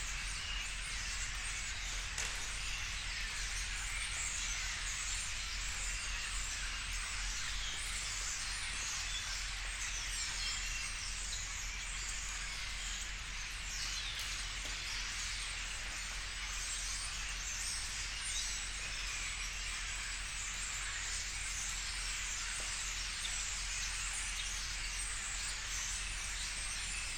berlin, friedelstraße: backyard window - the city, the country & me: backyard window, swarm of birds
a swarm of birds in the trees of the backyard, raindrops
the city, the country & me: october 24, 2014
99 facets of rain